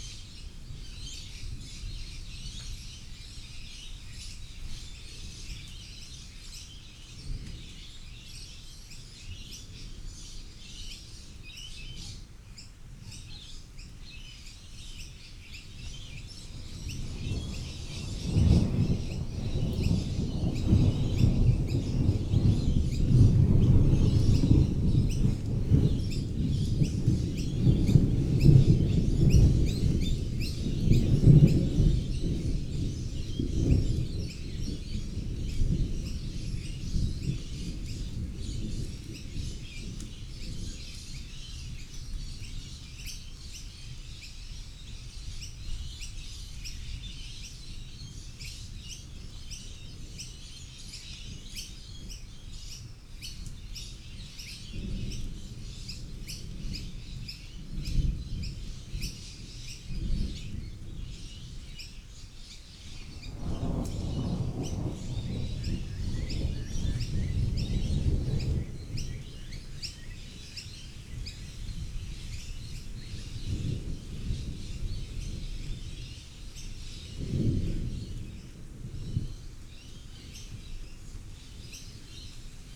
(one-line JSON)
{"title": "Coomba Park NSW, Australia - Storm Brewing Birds", "date": "2015-12-16 14:16:00", "description": "A storm brewing with bird sounds in Coomba Park, NSW, Australia.", "latitude": "-32.24", "longitude": "152.47", "altitude": "16", "timezone": "Australia/Sydney"}